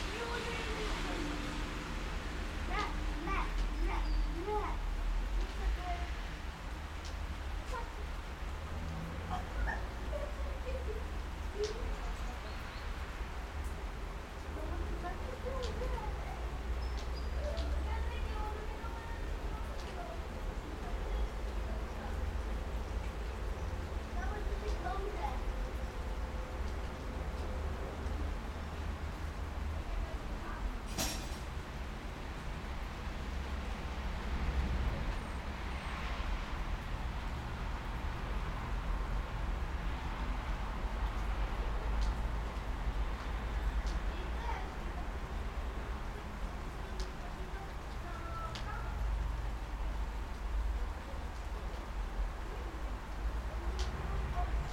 Cold rainy Friday on the balcony of an apartment building in Liberec. Childrend play under the balcony.